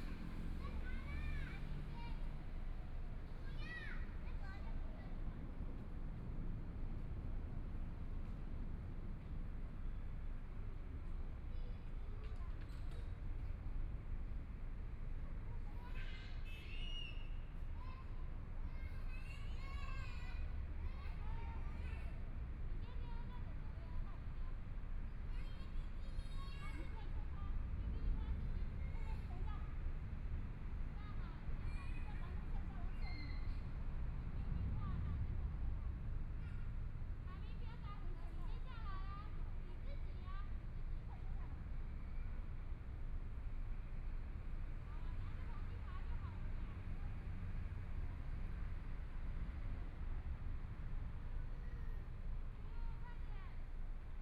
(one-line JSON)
{"title": "FuHua Park, Taipei City - Mother and child", "date": "2014-02-08 14:15:00", "description": "in the Park, Mother and child, Traffic Sound, Motorcycle Sound, Birds singing, Binaural recordings, Zoom H4n+ Soundman OKM II", "latitude": "25.05", "longitude": "121.54", "timezone": "Asia/Taipei"}